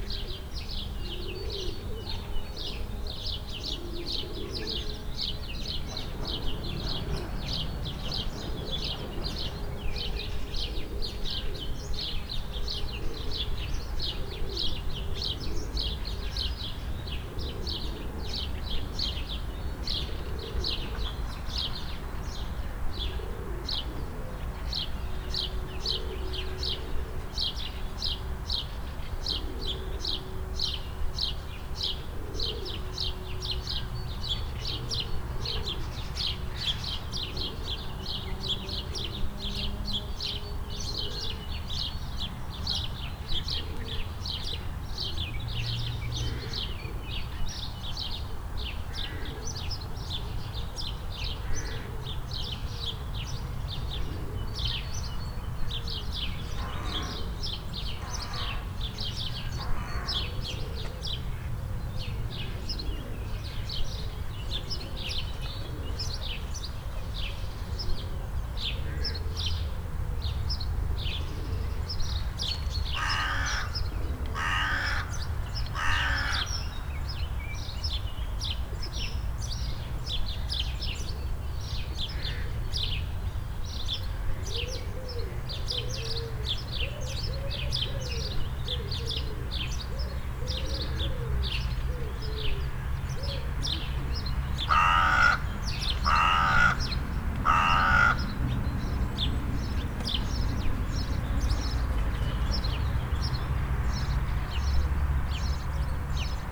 Covid 19 at Le Parc Południowy, est un parc paysager de la ville de Wrocław situé dans le sud de la ville (arrondissement de Krzyki - Quartier de Borek). Il a une grande valeur de composition et de dendrologie.
Parmi les spécimens remarquables du parc on peut citer : le taxodium (Taxodium distichum), le tulipier de Virginie (Liriodendron tulipifera), le noyer blanc d'Amérique (Carya ovata) et une espèce que l'on rencontre rarement en Pologne, le marronnier d'Inde à feuilles digitées (Aesculus hippocastanum Digitata).